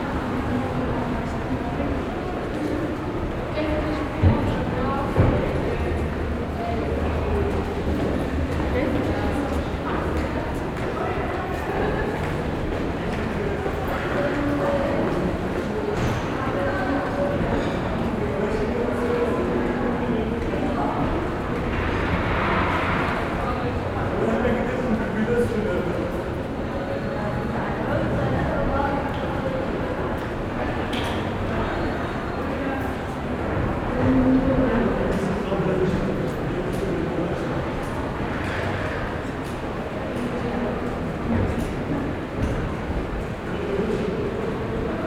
Stadtkern, Essen, Deutschland - essen, main station, pedestrian underpass
In einer Fussgänger Unterführung unterhalb des Hauptbahnhofes. Ein langer Tunnel mit einer LED Lichtwand. Der Klang von Stimmen und Schritten.
Inside a pedestrian underpass. a long tunnel with a LED light wall. The sound of voices and steps.
Projekt - Stadtklang//: Hörorte - topographic field recordings and social ambiences